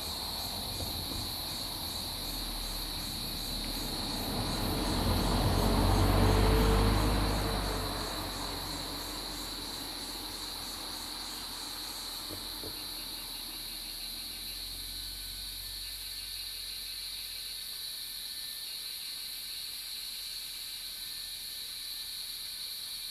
華龍巷, 魚池鄉五城村, Taiwan - Cicada and Bird sound
Cicada sounds, Bird sounds, For woods, traffic sound
Zoom H2n MS+XY